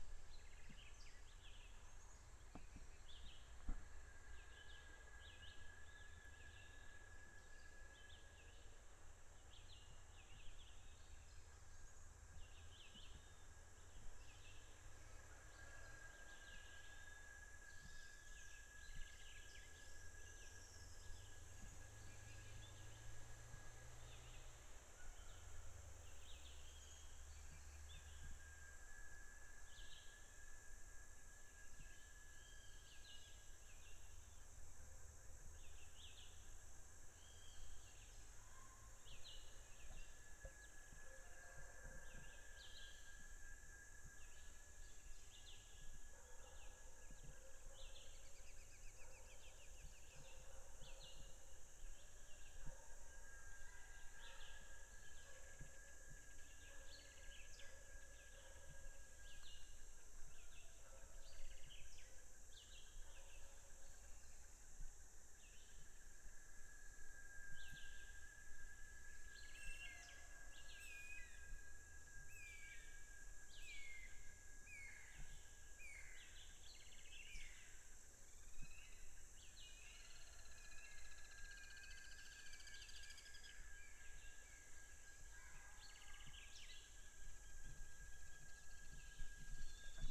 Cruz das Almas, BA, Brasil - Eucalipto Frente a Casa
Captação feita com base da disciplina de Som da Docente Marina Mapurunga, professora da Universidade Federal do Recôncavo da Bahia, Campus Centro de Artes Humanidades e Letras. Curso Cinema & Audiovisual. CAPTAÇÃO FOI FEITA COM UM PCM DR 50. Captação Feita em Frente a uma residencia comum localizada dentro do campus. EM CRUZ DAS ALMAS-BAHIA.
8 March 2014, 09:50